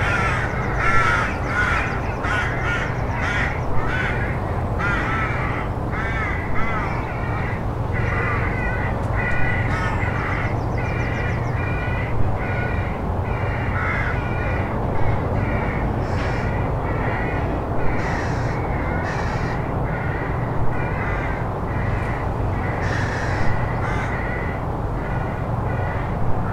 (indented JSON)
{
  "title": "Monasterboice Cemetary and High Crosses, Co. Louth, Ireland - The Sunken Hum Broadcast 128 - Crows at Monasterboice High Crosses and Round Tower - 8 May 2013",
  "date": "2013-05-07 15:41:00",
  "description": "We stopped by to see the high crosses at Monasterboice. The crow babies were calling for food all around. I sat down inside the ruins of an old church to record them. Later, when I told my friend about it, she said I'd been sitting just next to her dad's grave.\nThis is the 128th Broadcast of The Sunken Hum, my sound diary for 2013.",
  "latitude": "53.79",
  "longitude": "-6.42",
  "altitude": "101",
  "timezone": "Europe/Dublin"
}